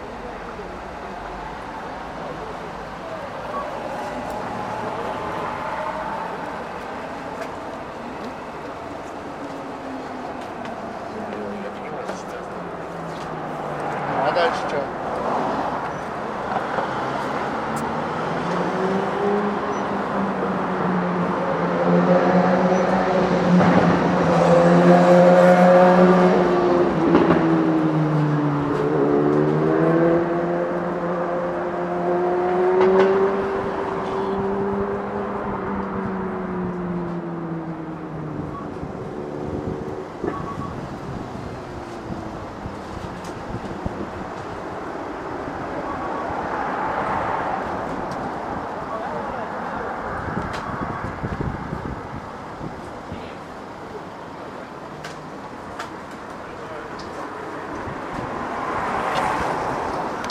Орджоникидзе ул., Москва, Россия - Ordzhonikidze street
Ordzhonikidze street. At the entrance to the Red and white store. You can hear the wind blowing, people talking, a tram goes, cars go, then a car starts, a truck goes, a motorcycle and other street noises. Day. Clearly. Without precipitation.
Москва, Центральный федеральный округ, Россия, 16 May 2020, 14:20